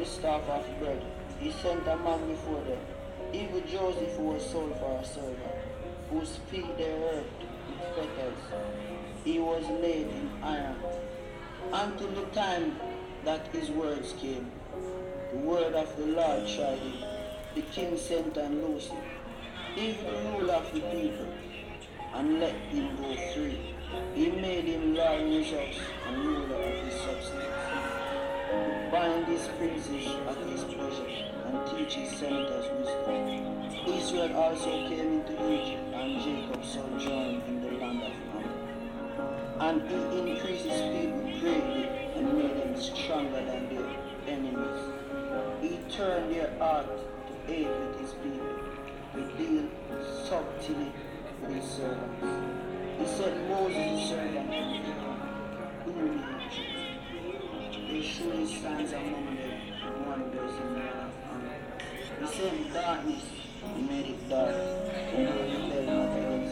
A boy from Gambia listens to a Jamaican pentecostal preacher on his boombox